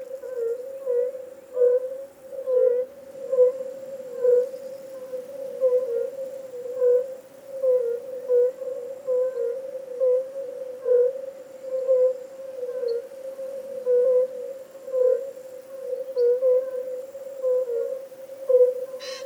Benesti, Romania - Toads singing during the afternoon, in a field in Romania
During the afternoon in a field close to the small village of Benesti, some toads are singing, accompanied by a light wind and some birds.
Recording by an ORTF Setup Schoeps CCM4 microphones in a Cinela Suspension ORTF. Recorded on a Sound Devices 633.
Sound Reference: RO-180710T05
GPS: 44.662814, 23.917906
Recorded during a residency by Semisilent semisilent.ro/